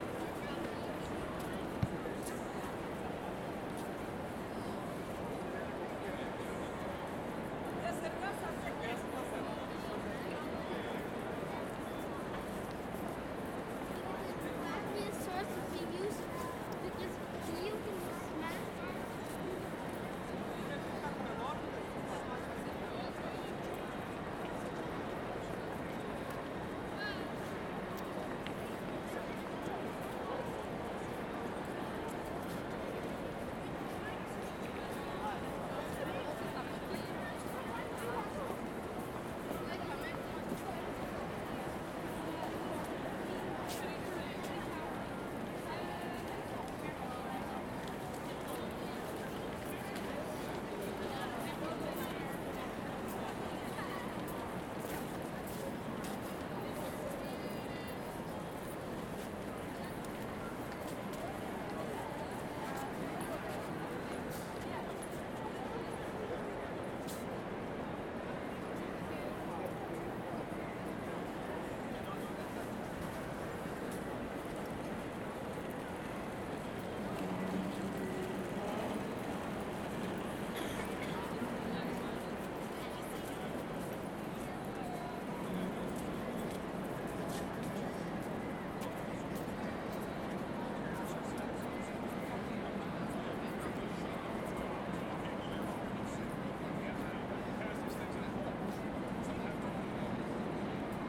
Midtown East, New York City, New York, USA - NYC, grand central station
NYC, grand central station, main hall, pedestrians, hum of voices;
15 February